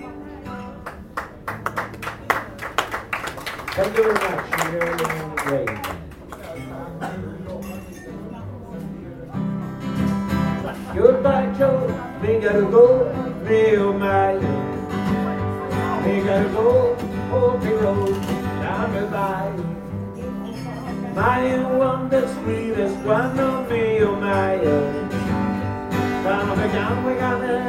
night ferry, solo entertainment aboard
recorded on night ferry trelleborg - travemuende, august 10 to 11, 2008.
Skåne län, Götaland, Sverige